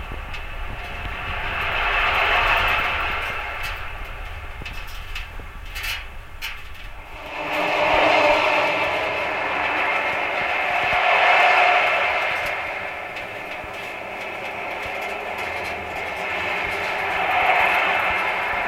{
  "title": "Novy Most Bridge, Bratislava, Lift in pylon",
  "latitude": "48.14",
  "longitude": "17.10",
  "altitude": "150",
  "timezone": "GMT+1"
}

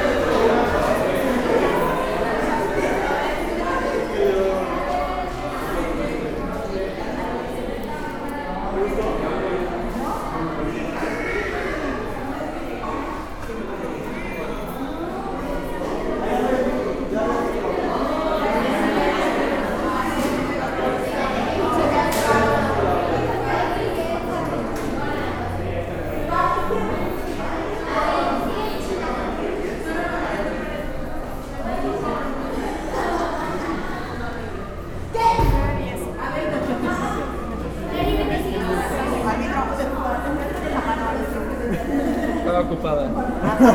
{
  "title": "Calle Independencia, Centro, León, Gto., Mexico - En el vestíbulo del Microteatro León y saliendo a la calle.",
  "date": "2019-10-24 20:58:00",
  "description": "In the lobby of the Microteatro Leon and going out to the street.\nI made this recording on October 24th, 2019, at 8:58 p.m.\nI used a Tascam DR-05X with its built-in microphones and a Tascam WS-11 windshield.\nOriginal Recording:\nType: Stereo\nEn el vestíbulo del Microteatro León y saliendo a la calle.\nEsta grabación la hice el 24 de octubre 2019 a las 20:58 horas.",
  "latitude": "21.12",
  "longitude": "-101.68",
  "altitude": "1804",
  "timezone": "America/Mexico_City"
}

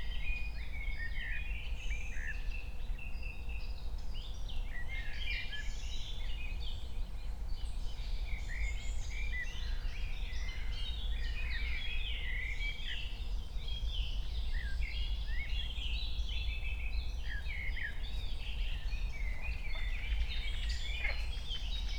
{
  "title": "Königsheide, Berlin - forest ambience at the pond",
  "date": "2020-05-23 09:00:00",
  "description": "9:00 dog, frog, crows and other birds",
  "latitude": "52.45",
  "longitude": "13.49",
  "altitude": "38",
  "timezone": "Europe/Berlin"
}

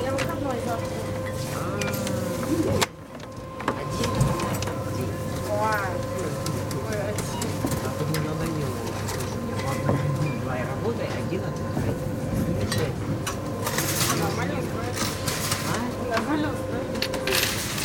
{
  "title": "Rimi supermarket checkout sounds, Tallinn",
  "date": "2011-04-19 16:00:00",
  "description": "checking out at the Rimi supermarket in Tallinn",
  "latitude": "59.44",
  "longitude": "24.74",
  "altitude": "20",
  "timezone": "Europe/Tallinn"
}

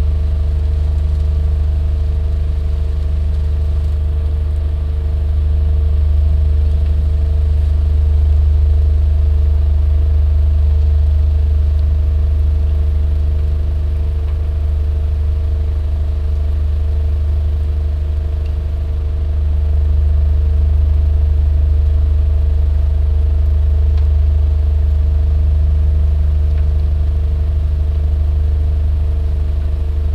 {
  "title": "Morasko, field road near train tracks - water pump",
  "date": "2014-11-07 13:35:00",
  "description": "chest punching hum of a industrial water pump",
  "latitude": "52.47",
  "longitude": "16.90",
  "altitude": "100",
  "timezone": "Europe/Warsaw"
}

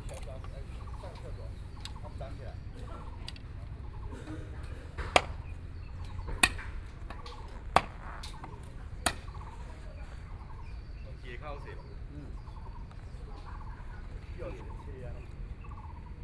{
  "title": "BiHu Park, Taipei City - in the Park",
  "date": "2014-05-04 10:37:00",
  "description": "Construction works of art, Aircraft flying through, Walking to and from the sound of the crowd, Frogs sound",
  "latitude": "25.08",
  "longitude": "121.58",
  "altitude": "19",
  "timezone": "Asia/Taipei"
}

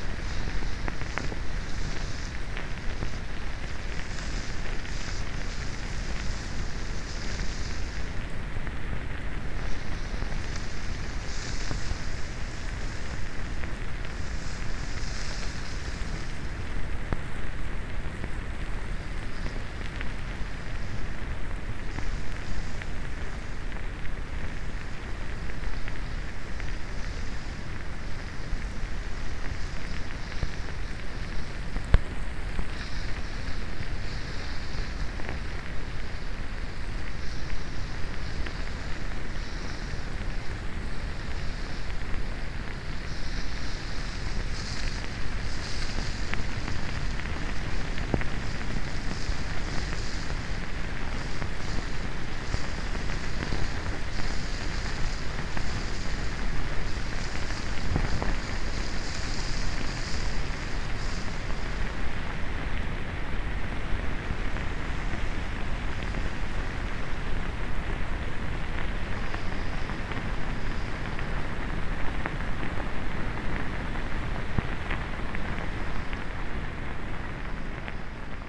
{
  "title": "underwater springhead, Utena, Lithuania",
  "date": "2019-03-24 16:50:00",
  "description": "underwater springhead in the meadow. dipped my aquarian hydro in it. a lot of sand bruising sounds...",
  "latitude": "55.53",
  "longitude": "25.65",
  "altitude": "127",
  "timezone": "Europe/Vilnius"
}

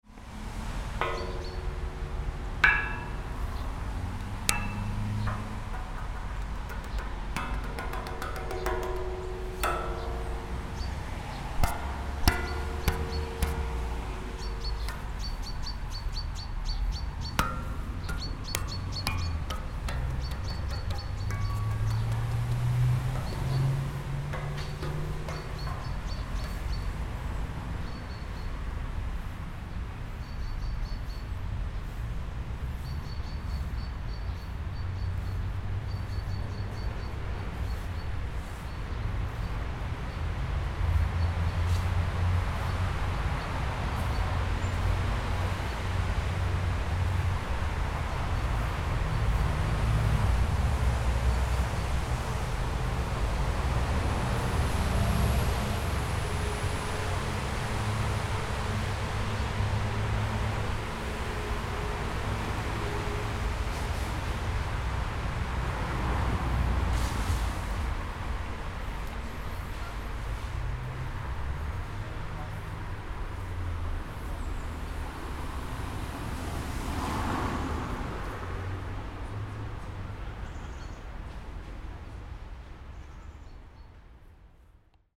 {
  "title": "Kunstwerkgeräusche auf einem Kunstwerg auf der Liegewiese des Faulerbades - Kunstwerkgeräusche auf einem Kunstwerk auf der Liegewiese des Faulerbades",
  "date": "2011-06-13 12:40:00",
  "description": "Kunstwerk, Eisen, Jörg Siegele, Kunst auf der Liegewiese, Sonnenbaden neben und unter der Kunst",
  "latitude": "47.99",
  "longitude": "7.84",
  "altitude": "273",
  "timezone": "Europe/Berlin"
}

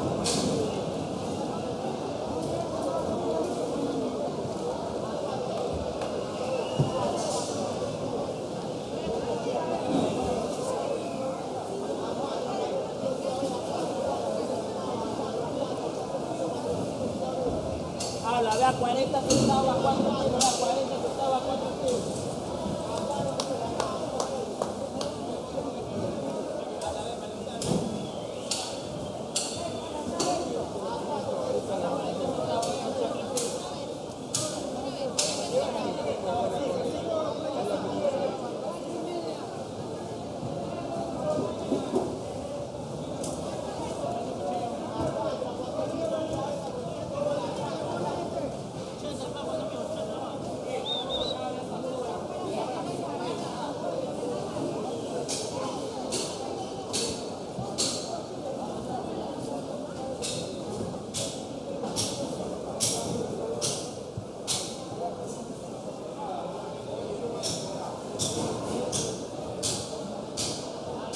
{
  "title": "Guayaquil Ecuador - Mercado Caraguay",
  "date": "2021-03-08 13:27:00",
  "description": "Caraguay Market located in the south of the city of Guayaquil Ecuador. This market is popular for selling seafood.",
  "latitude": "-2.23",
  "longitude": "-79.89",
  "altitude": "8",
  "timezone": "America/Guayaquil"
}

